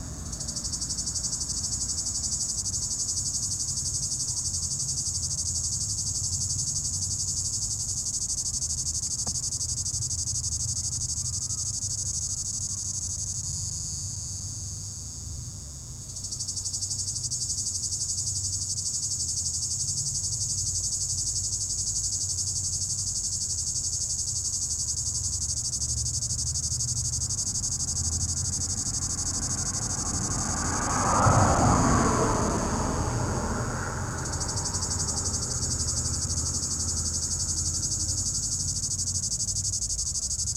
Rte d'Aix, Chindrieux, France - cigale
Cigale dans un pommier au sommet de la côte de groisin, il fait 32° circulation sur la RD991, quelques voix de la plage de Chatillon au loin. Zoomh4npro niveau préampli 100.